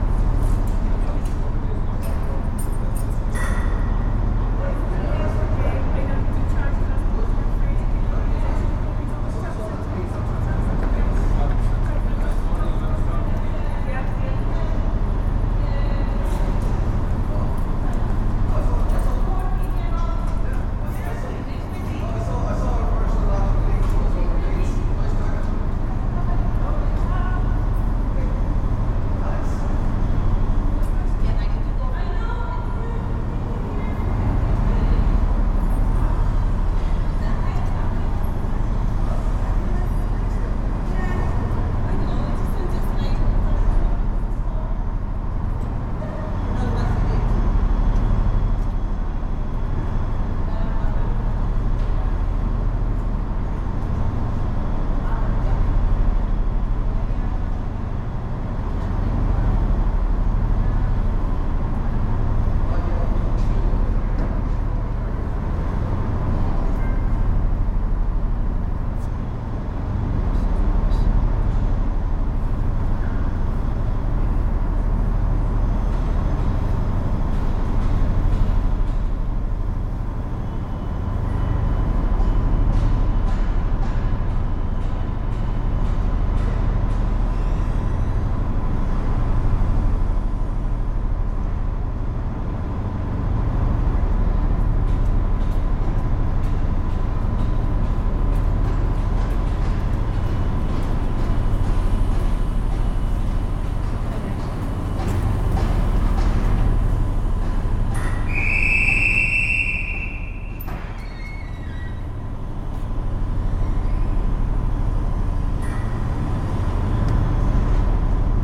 11.25am train to Dublin Heuston sitting on platform and then departing. Noise of local construction work throughout. Tascam DR-05.
6 February 2019, 11:19